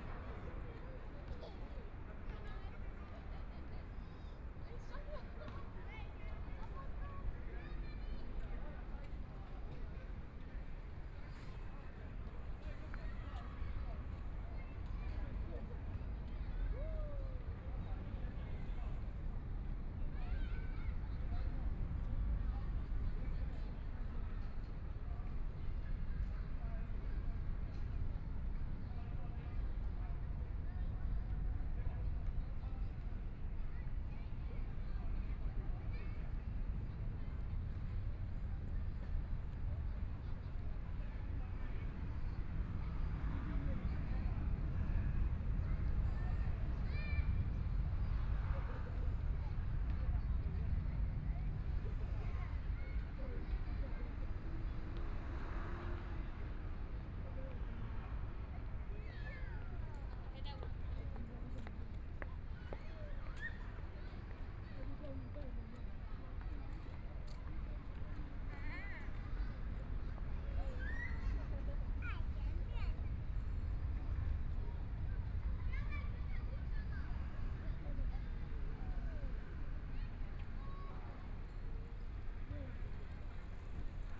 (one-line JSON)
{"title": "MingShui Park, Taipei City - Sitting in the park", "date": "2014-02-16 17:52:00", "description": "Sitting in the park, Traffic Sound, Community-based park, Kids game sounds, Birds singing, Environmental noise generated by distant airport, Binaural recordings, Zoom H4n+ Soundman OKM II", "latitude": "25.08", "longitude": "121.55", "timezone": "Asia/Taipei"}